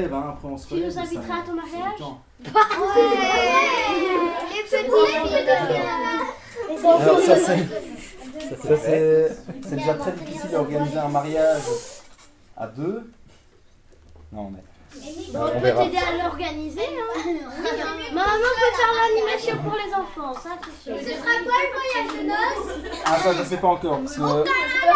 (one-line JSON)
{"title": "Court-St.-Étienne, Belgique - La Chaloupe", "date": "2015-03-23 16:15:00", "description": "La Chaloupe, meaning the small boat, is a ludic place where children can express themselves.", "latitude": "50.65", "longitude": "4.57", "altitude": "62", "timezone": "Europe/Brussels"}